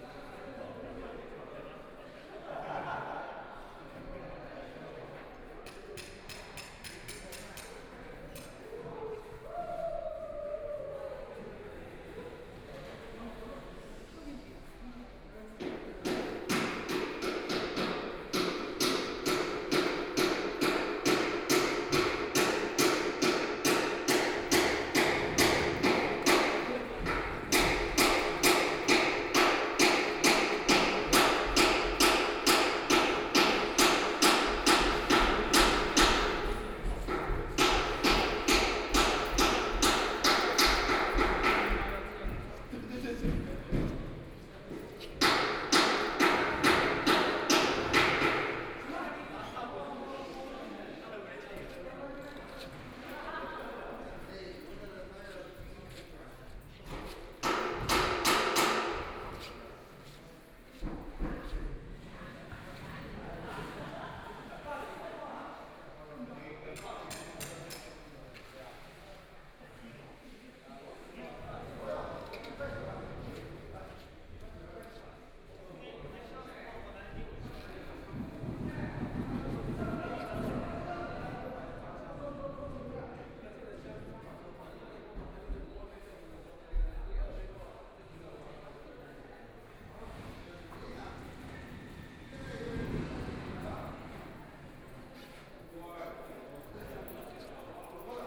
Power Station of Art, Shanghai - Production exhibition wall
Construction workers are arranged exhibition, the third floor, The museum exhibition is arranged, Binaural recording, Zoom H6+ Soundman OKM II (Power Station of Art 20131127-4)
Huangpu, Shanghai, China